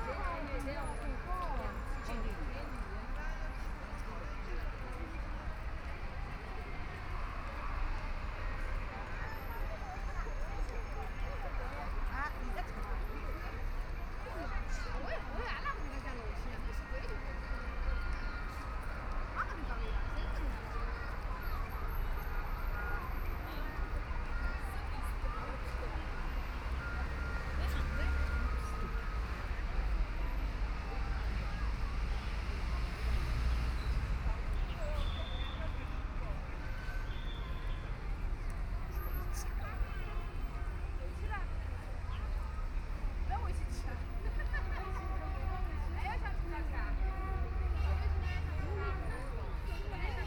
Heping Park, Hongkou District - Holiday parks
Walking through the various areas in the park, Binaural recording, Zoom H6+ Soundman OKM II